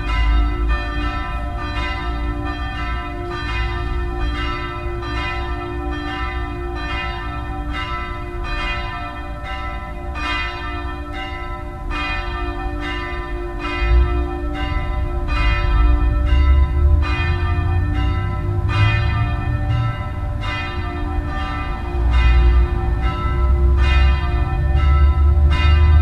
St. Marys Church Bells, Tartu, Estonia